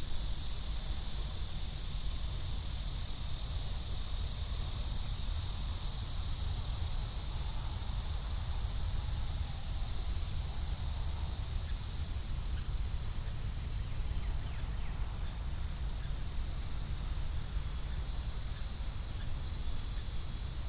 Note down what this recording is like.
Sitting on this nature trail, listening to the sounds of birds and bugs come and go.